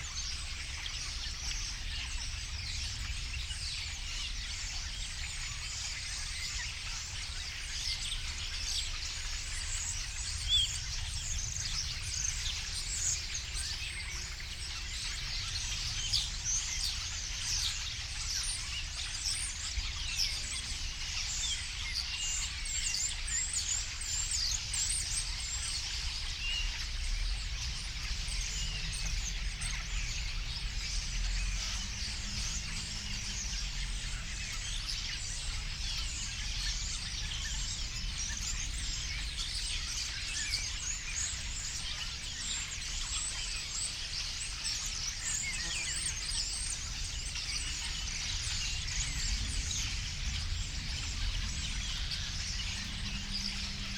Kirchmöser Ost - starlings /w air traffic noise
many Starlings gathering in the trees around, unfortunately I've missed the moment a minute later, when they all flew away in one great rush. Constant rumble of aircraft on this Sunday afternoon in early autumn.
(Sony PCM D50, Primo EM172)
Brandenburg, Deutschland, 25 September 2022